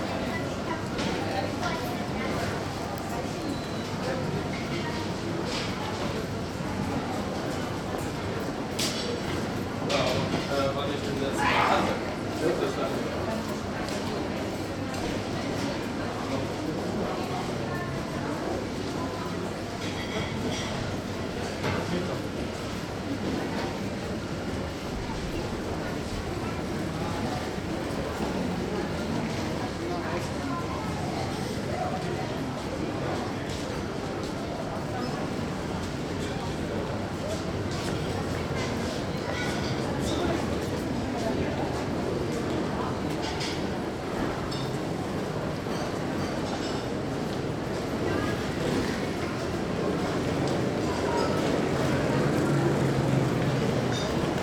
Ostbahnhof - Eingangsbereich / entry area
22.03.2009 17:25 Berlin Ostbahnhof, Eingangsbereich / entry area, sunday afternoon, crowded